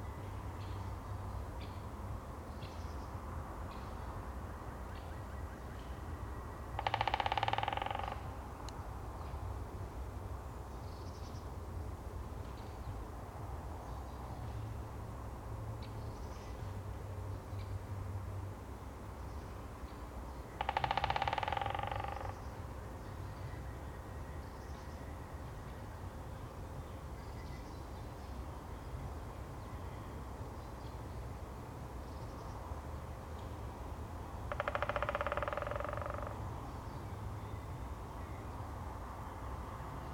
Utena, Lithuania, at the swamp near town
some swamp near my town...woodpeckers and so on...